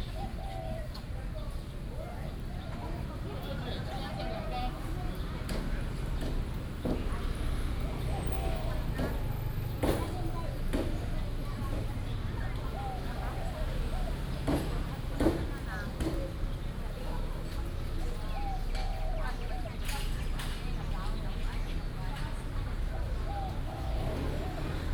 Traffic Sound, Sitting in the park, Near the traditional markets, Bird calls
Da’an District, Taipei City, Taiwan, July 2015